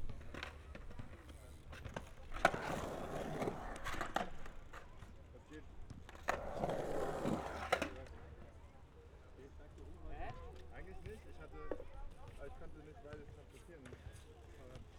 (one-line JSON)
{"title": "Berlin, Gleisdreieck", "date": "2011-11-12 13:15:00", "description": "skaters excercising. the huge and fascinating area at Gleisdreieck is slowly developed into a park.", "latitude": "52.50", "longitude": "13.37", "altitude": "39", "timezone": "Europe/Berlin"}